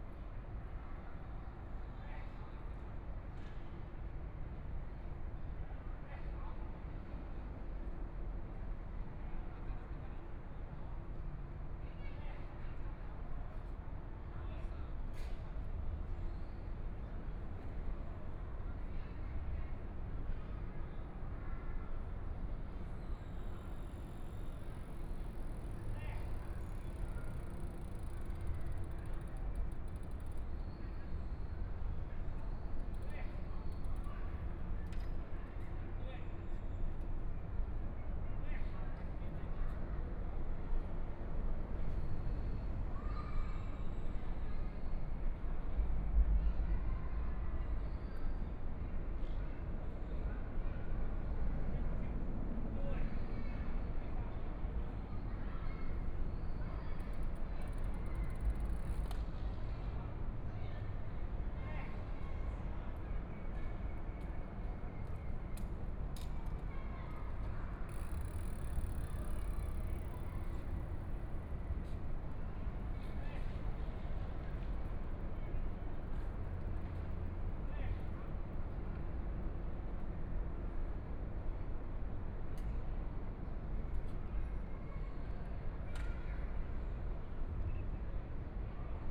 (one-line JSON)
{"title": "Dazhi Bridge, Taipei City - Sitting below the bridge", "date": "2014-02-16 17:24:00", "description": "Sitting below the bridge\nBinaural recordings, ( Proposal to turn up the volume )\nZoom H4n+ Soundman OKM II", "latitude": "25.08", "longitude": "121.54", "timezone": "Asia/Taipei"}